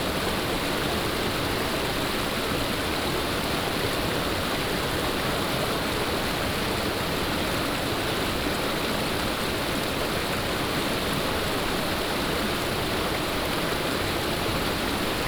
種瓜坑溪, 成功里, Puli Township - Stream sound
Stream sound
Binaural recordings
Sony PCM D100+ Soundman OKM II